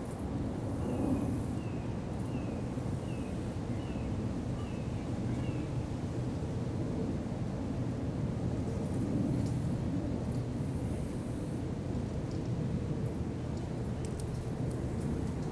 Riverside Park, Roswell Riverwalk Trail, Roswell, GA, USA - Riverside Park - A Bench By The River
A recording taken from a small wooden platform with a bench that overlooks the Chattahoochee. The water is so still that it's completely inaudible. Traffic from the nearby road is heard, as is the human activity emanating from riverside park. Some wildlife also made it into the recording, including a cardinal that nearly clipped my preamps with its chirp.
[Tascam Dr-100mkiii w/ Primo EM-272 omni mics, 120hz low cut engaged]